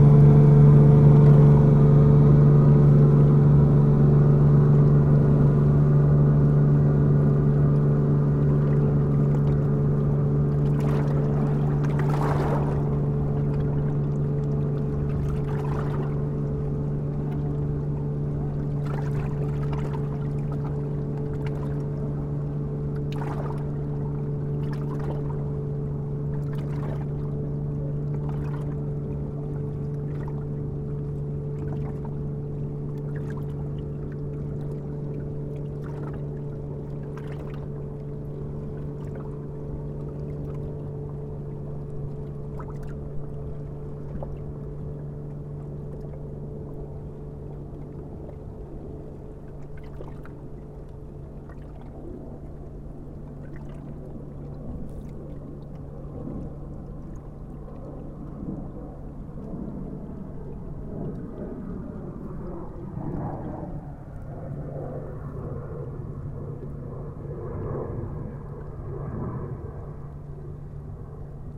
Beersel, Belgique - Barges
Three barges passing by on the Brussels to Charleroi canal.
Beersel, Belgium, 13 August 2016